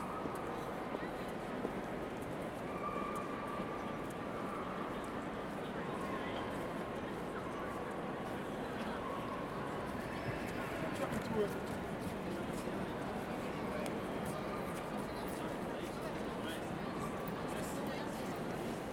NYC, grand central station, main hall, pedestrians, hum of voices;
NY, USA, 15 February 2014